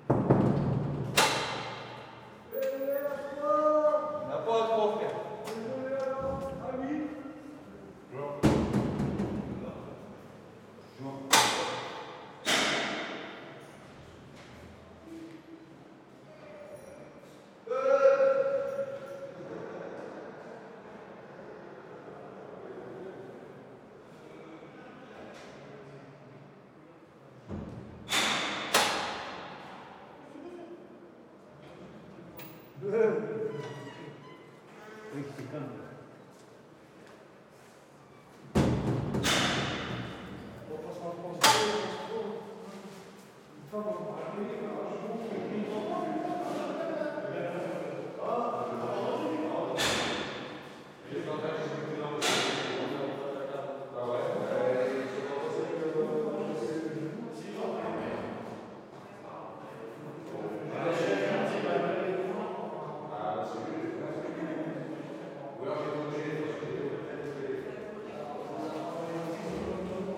Rte des Bruyères, Longuenesse, France - Centre de Détention de Longuenesse
Longuenesse - Pas-de-Calais
Centre de Détention
Ambiance
12 May 2022, 10:30